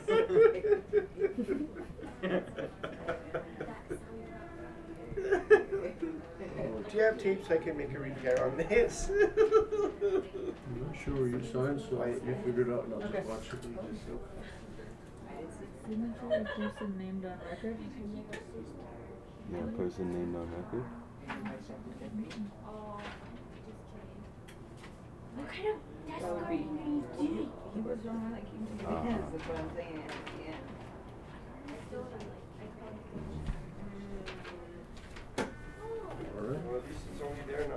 ekalos also has need of the notary public stamp of approval, so chinqi listens and records as we TCB. "lets do some notarizing..." some loud laughter and conversations from mr. vaughn's coworkers... the trip was a wild success!
Santa Fe, NM, USA, 29 June 2018, 08:11